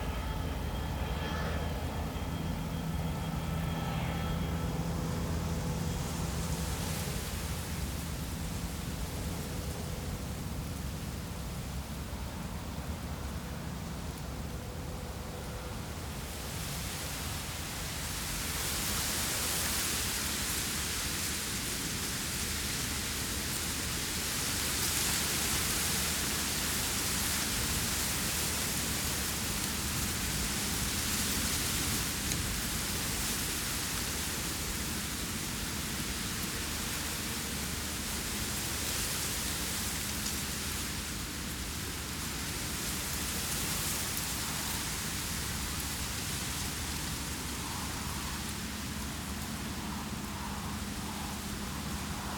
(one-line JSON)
{"title": "Spremberg, Germany - Reeds hissing in the wind", "date": "2012-08-24 13:57:00", "description": "A few plants grow in the debris around the mine edge. Here reeds in an almost dry pool blow in the wind. A vehicle grinds uphill half a kilometer away.", "latitude": "51.59", "longitude": "14.29", "altitude": "84", "timezone": "Europe/Berlin"}